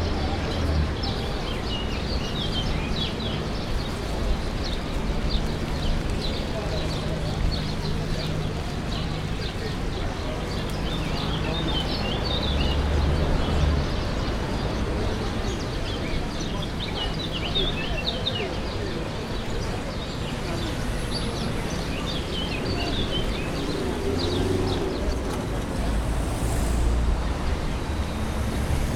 Baixa de Lisboa
A soundscape piece made of field recordings in the area, encompassing the subway station, the ferry boat harbour, the street market, the cafes...It goes from downtown Lisbon to Principe Real
Portugal, European Union, 22 March